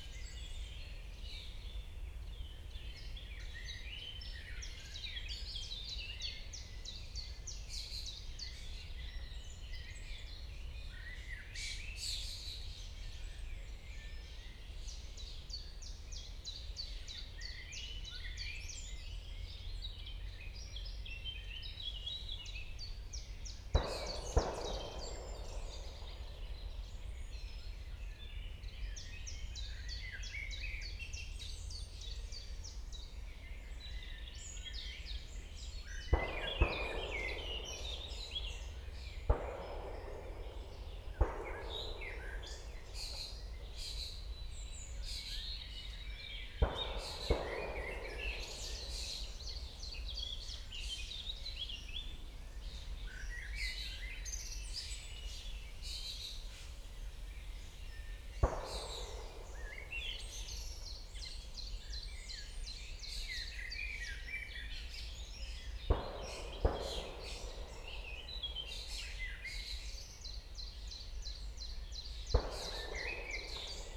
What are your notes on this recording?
trying to catch (the sound of) a flock of escaping starlings, at the edge of Bażantarnia forest and golf resort, forest ambience, (Sony PCM D40, DPA4060)